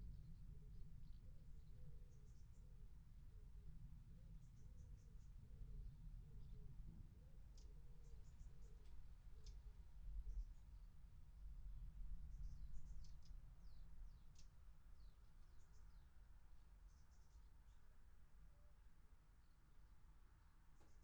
Luttons, UK - thunderstorm approaching ...
thunderstorm approaching ... xlr sass to zoom h5 ... bird song ... calls ... wood pigeon ... house martin ... tawny owl ... robin ... background noise ... traffic ...